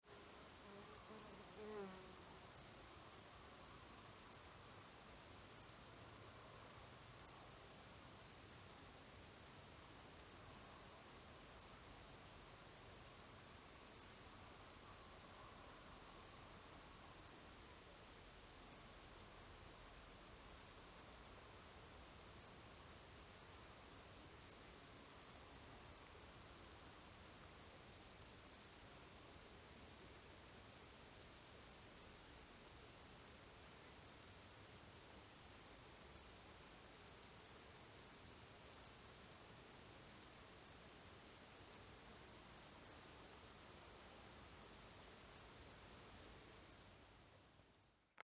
wooden pier, boats, waves - summer lawn
stafsäter recordings.
recorded july, 2008.
sweden